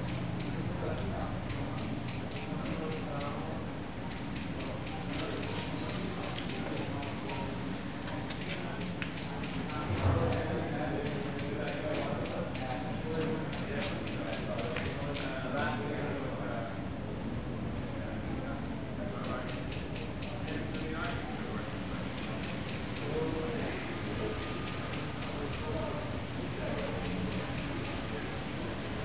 St Anne´s Church, Prague - Birdsong and Voices, Outside St Anne´s Church, Prague
24 June 2011, 12:30